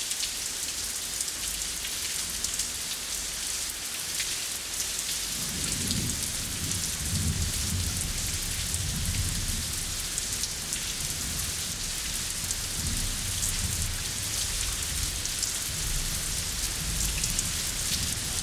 Carrer de les Eres, Masriudoms, Tarragona, Spain - Masriudoms Foothills Thunderstorm
Recorded with a pair of DPA 4060s into a Marantz PMD661